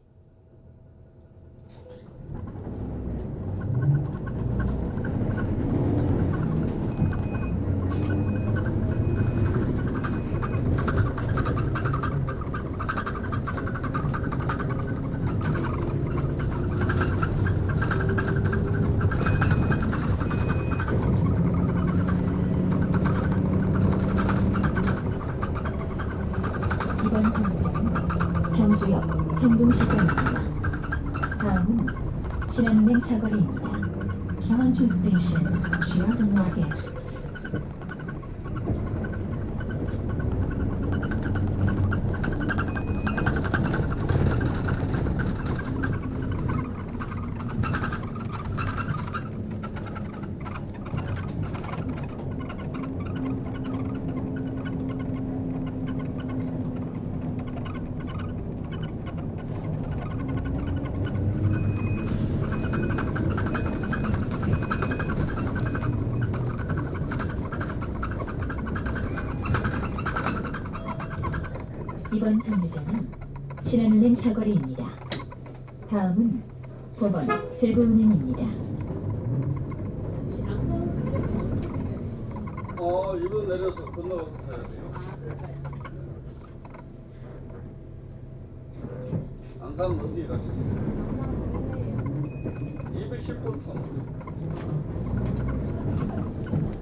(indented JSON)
{
  "title": "Gyeongju-si, South Korea - Bus ride",
  "date": "2016-10-06 14:30:00",
  "description": "Public bus ride in Gyeongju City",
  "latitude": "35.84",
  "longitude": "129.21",
  "altitude": "39",
  "timezone": "Asia/Seoul"
}